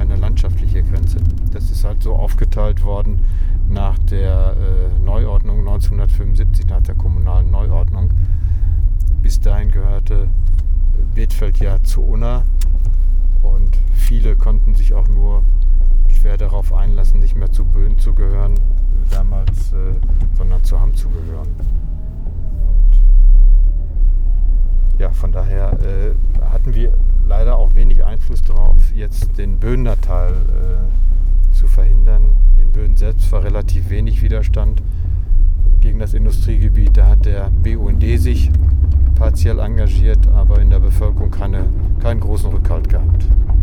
Driving a dirt road along the motorway, which so Rudi and Stefan cuts the shallow valley of Weetfeld two parts; two different communal areas and developments. The residents on the Bönen side of the motorway have not been very active against industrial developments on their side…
Wir fahren entlang der Autobahn auf einer unbefässtigten Strasse…
“Citizen Association Against the Destruction of the Weetfeld Environment”
(Bürgergemeinschaft gegen die Zerstörung der Weetfelder Landschaft)
Germany, 28 November, ~18:00